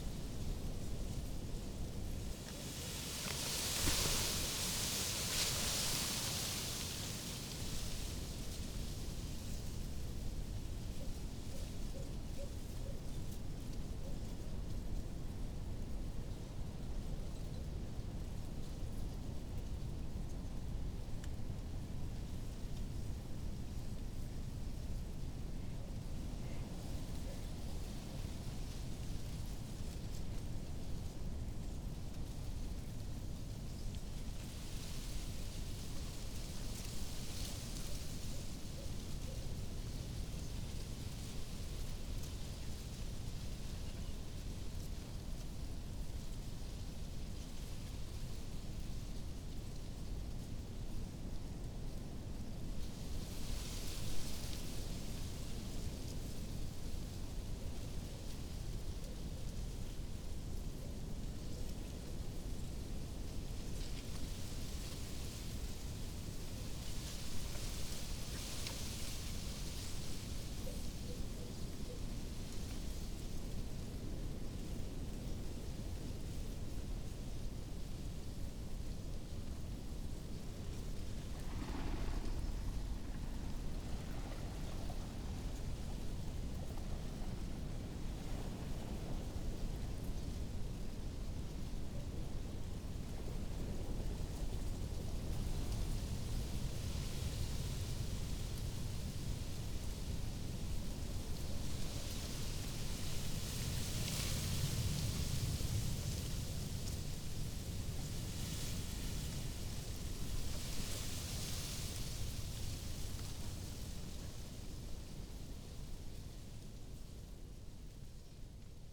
groß neuendorf, oder: river bank - the city, the country & me: reed
stormy afternoon, reed rustling in the wind, some ducks and a barking dog in the distance
the city, the country & me: january 3, 2015
Letschin, Germany, 3 January 2015